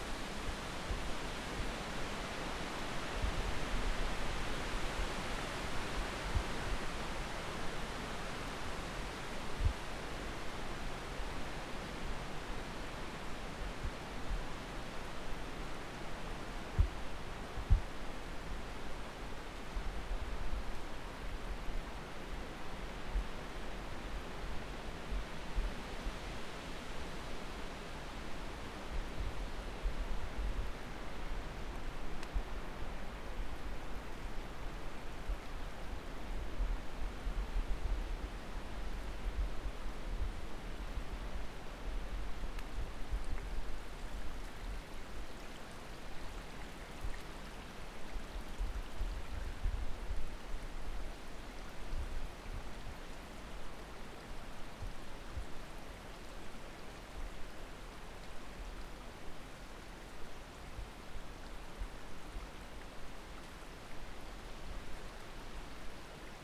Ku Dziurze valley, wind in the trees
this track was not processed at all. it is also not a mix a few tracks. the hiss you hear is not a synthetic noise but only the sound of wind in the trees